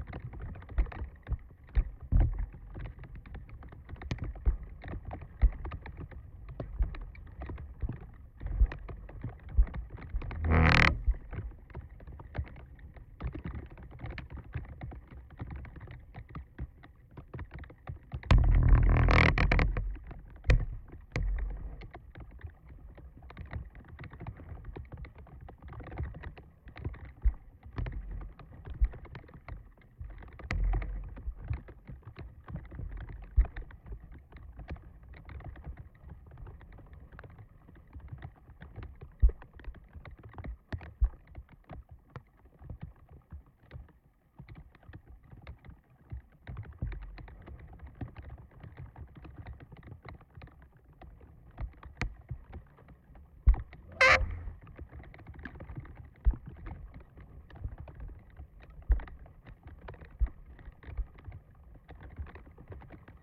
Šlavantai, Lithuania - Tree branch brushing against wooden fence, creaking
Dual contact microphone recording of a tree branch, pressing against a wooden fence and occasionally brushing due to wind pressure. During the course of recording it started to rain, droplets can be heard falling onto the branch surface.